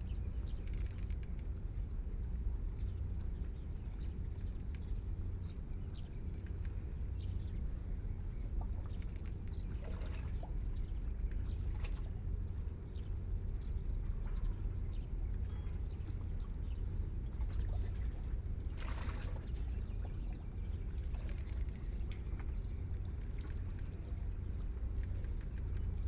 Kaohsiung City, Taiwan - Yacht
In the dock, Yacht, Birds singing